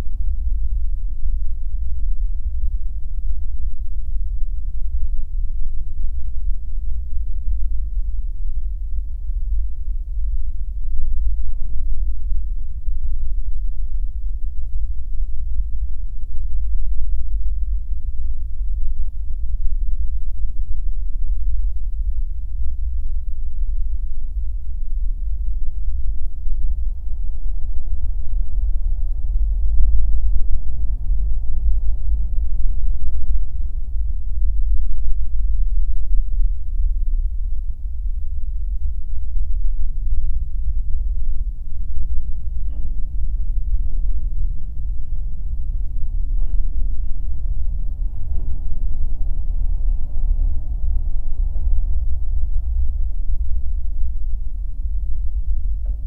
Kaunas, Lithuania, abandoned autodrom
Abandoned autodrom. This was very popular in soviet times: you could drive small electrical cars on a special place. For the recording I placed magnetic geophone on some kind of metallic mesh that at the roof. The purpose of the mesh was to give electrical phase to the small cars.